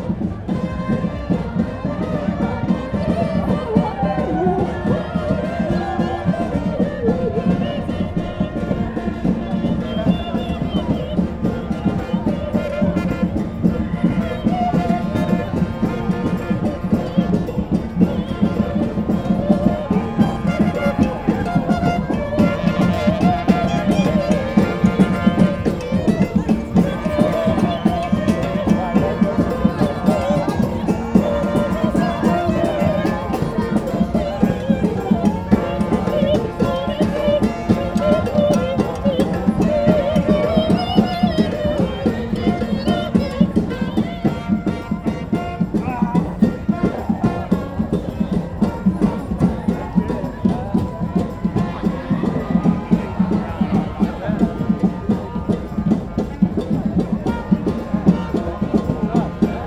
Regent St, Marylebone, London, UK - Extinction Rebellion: Ode to Joy band and opera singers
Extinction rebellion fashion show. Blocked from traffic, two pink carpets were rolled out across Oxford Circus for a colourful imaginative fashion show, while the band and opera singer belted out Ode to Joy and other tunes.